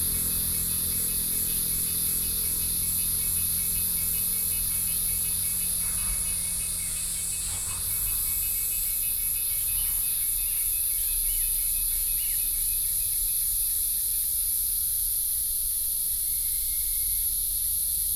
獅頭山公園, Jinshan District - in the Park
Park entrance, Cicadas cry, Bird calls, Traffic Sound
Sony PCM D50+ Soundman OKM II
New Taipei City, Taiwan, 11 July, ~09:00